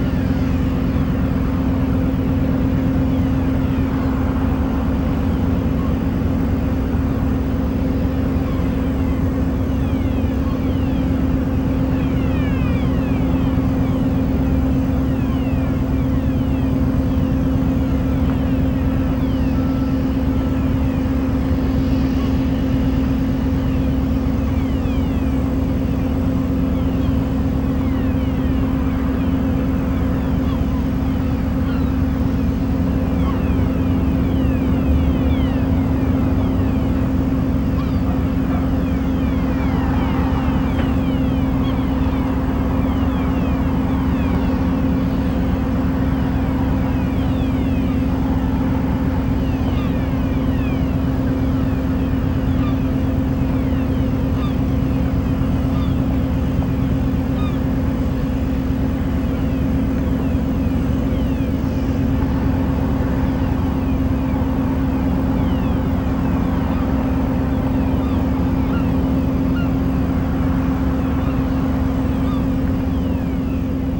Dunkerque Port Freycinet Mole 4 - DK Port Freyc Mole 4
Dunkerque, Port Freycinet, Mole 4, noises from repair docks across the basin. 2 x Rode NT2A, RME Quadmic, EMU 1616.